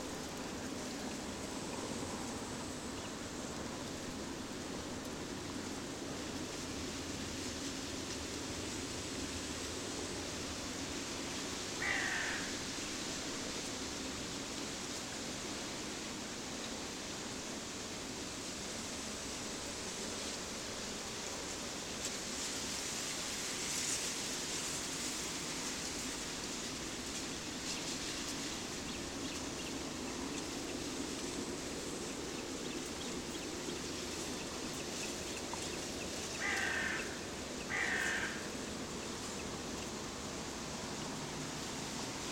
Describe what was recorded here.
sitting at the lake and listening to white noises of reeds